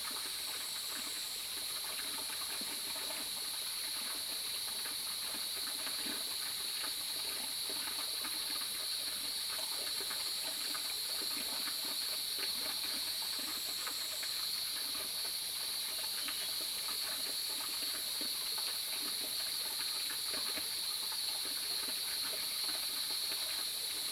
華龍巷, 魚池鄉, Nantou County - Upstream streams
Cicadas cry, Bird sounds, Small streams
Zoom H2n MS+XY
Nantou County, Yuchi Township, 華龍巷43號, June 2016